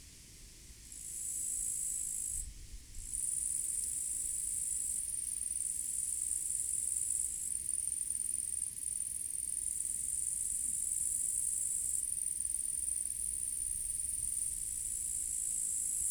Cvrčci na louce nad Václavicemi